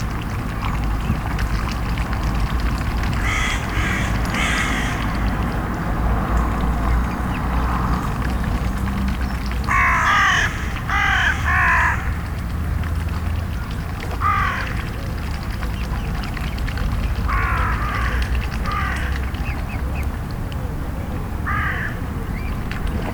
Rue de la Fontaine Saint-Clair, Plesse, France - Juveline swans lapping
Juveline swans lapping water in the canal. Crow calls. Distant traffic and walkers voices.
Des cygnes juvéniles lapent la surface de l’eau. Cris de corbeaux. Bruit de trafic lointain et voix de promeneurs